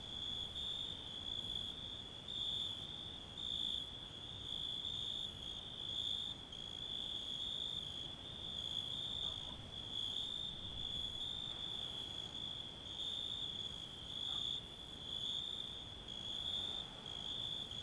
Orhei Vechi, Moldova - The Cricket Symphony at Old Orhei
It was at the end of the summer and it started to get cold after the sunset. The recording was done with a Zoom H6 and 2 microphones: Zoom SSH-6 (Shotgun mic) that was hiding in the bushes with the crickets and Shure Sm58 (Omnidirectional mic) some meters away. This is a raw version of the recording. Thank you!